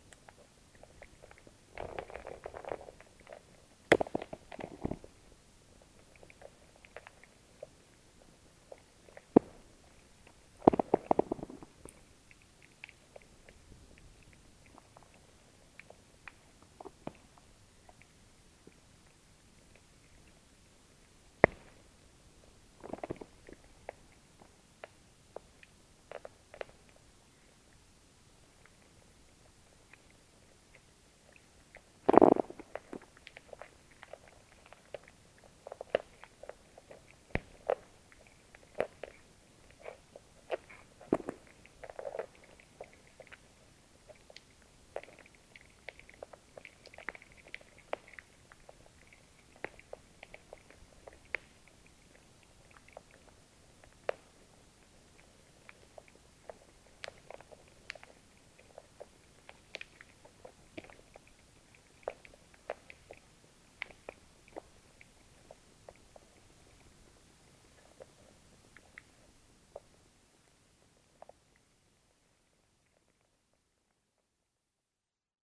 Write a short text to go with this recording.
The minuscule life of a pond, recorded with a contact microphone, buried directly into the silt. Small animals are moving, diging, eating.